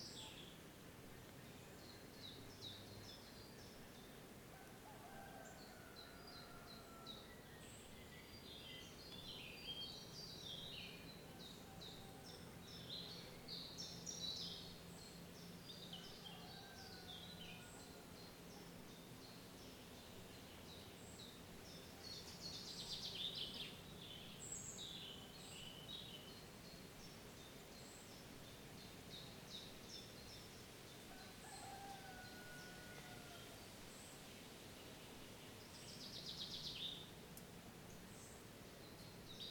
провулок Черняховського, Вінниця, Вінницька область, Україна - Alley12,7sound15birds
Ukraine / Vinnytsia / project Alley 12,7 / sound #15 / birds
27 June 2020, 14:42